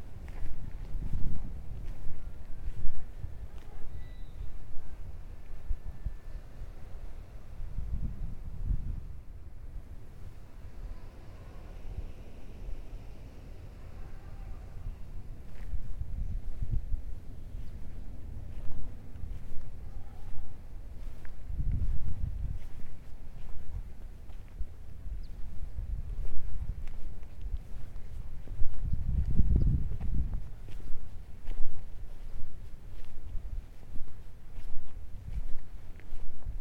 Achumani Alto, La Paz
por Oscar Garcia
Our Lady of Peace, Bolivia, September 2012